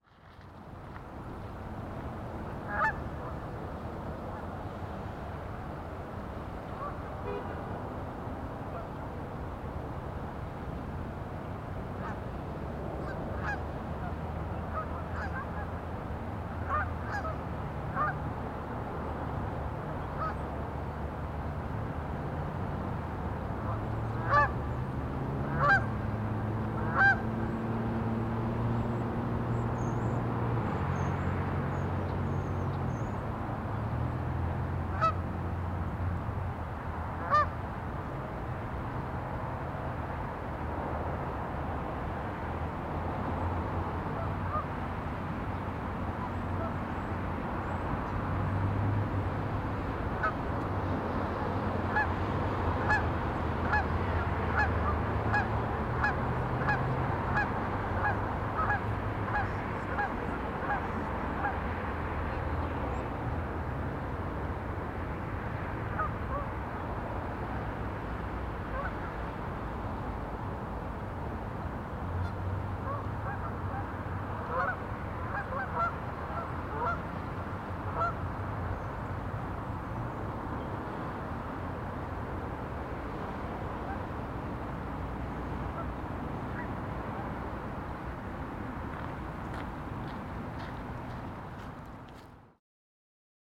Cedar Creek Park, Parkway Boulevard, Allentown, PA, USA - A horde of geese in Lake Muhlenberg
I stumbled across a giant gathering of geese and they were pretty talkative. I got the mic as close as I could to the geese without disturbing them - no geese were harmed in the making of this recording!